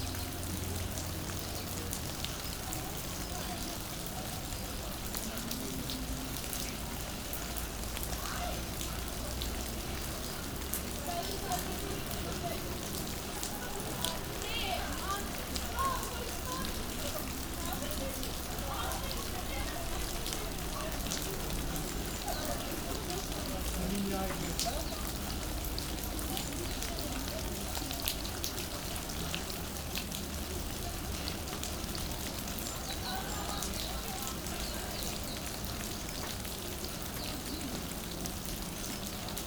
Gyumri, Arménie - Rain
A quite strong rain, falling on a roof and after, seeping into the forest.
Armenia, September 2018